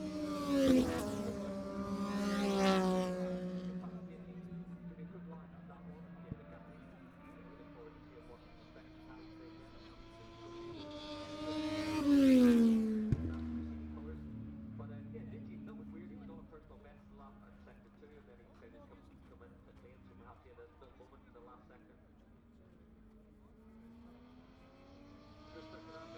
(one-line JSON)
{"title": "Silverstone Circuit, Towcester, UK - british motorcycle grand prix 2021 ... moto two ...", "date": "2021-08-27 10:55:00", "description": "moto two free practice one ... maggotts ... dpa 4060s to Zoom H5 ...", "latitude": "52.07", "longitude": "-1.01", "altitude": "158", "timezone": "Europe/London"}